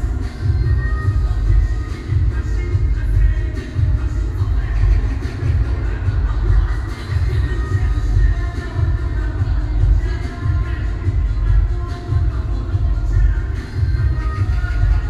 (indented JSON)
{
  "title": "Zhongzheng Dist., Taipei City - Mix",
  "date": "2013-10-10 11:57:00",
  "description": "The sound of the nearby protest gatherings, Cries of protest, Birdsong, Binaural recordings, Sony PCM D50 + Soundman OKM II",
  "latitude": "25.04",
  "longitude": "121.52",
  "altitude": "17",
  "timezone": "Asia/Taipei"
}